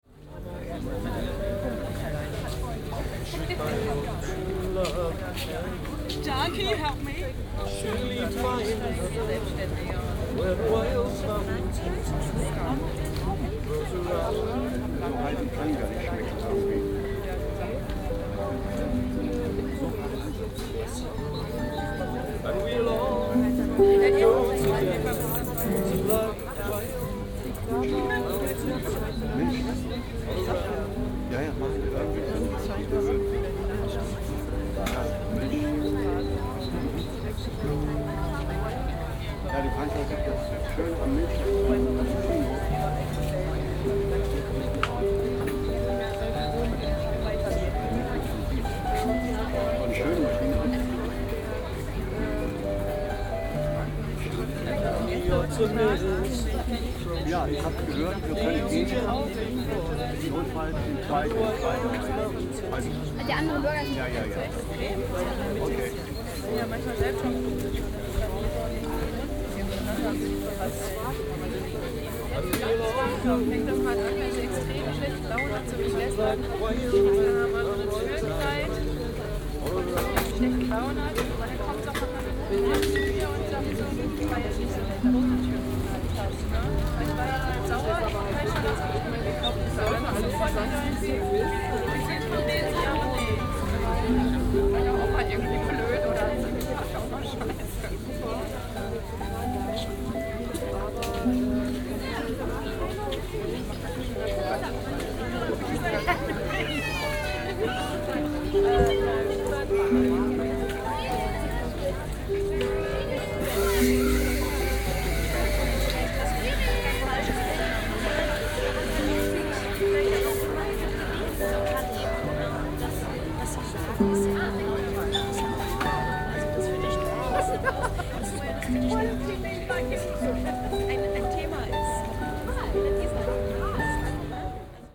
maybachufer, competing sounds - harpist & coffee2go
07.10.2008 12:15
today (market day) this place is occupied by a poor harpist. it's sunny, people are sitting around, having coffee and juice from the stand aside.
Berlin, 7 October 2008, ~12:00